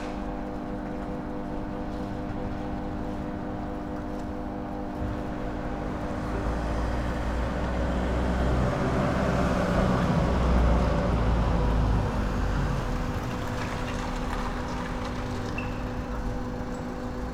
Universitätsbibliothek, Weimar, Deutschland - transformer drone
musical drones at the transformer station, Haus der Technik, university library Weimar.
(Sony PCM D50)